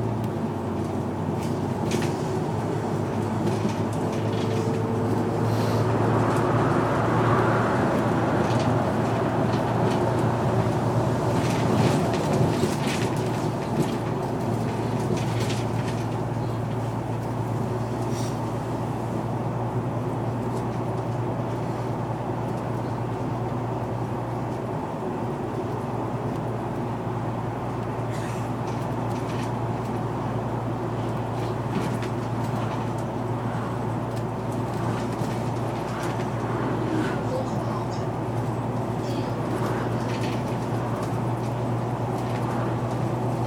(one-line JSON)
{"title": "Valkenswaard, Nederland - Busdrive to Eindhoven", "date": "2012-02-28 18:00:00", "description": "In a articulated bus from Valkenswaard to Eindhoven", "latitude": "51.36", "longitude": "5.46", "altitude": "30", "timezone": "Europe/Amsterdam"}